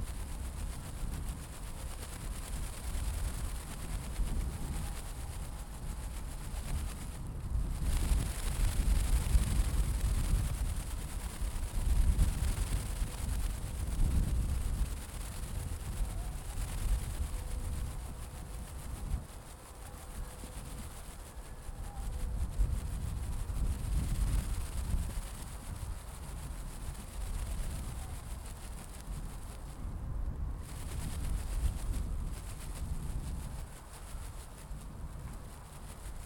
Old Sarum, Salisbury, UK - 058 Plastic caught on a rose; distant screams